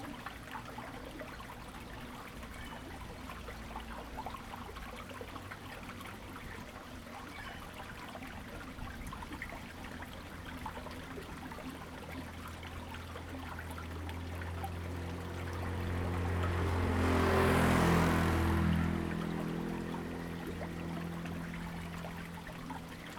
大竹村, Dawu Township - water and Birdsong
Watercourse below the ground, The sound of water, Birdsong, Small village
Zoom H2n MS +XY